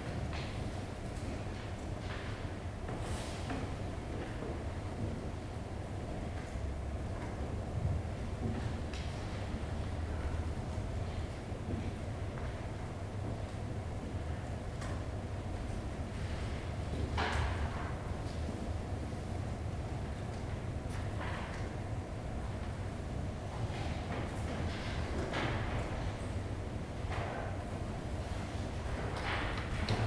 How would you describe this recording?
Library study room noise, people walking, handling books, consuming knowledge. Recorded with Zoom H2n. 2CH, deadcat, handheld.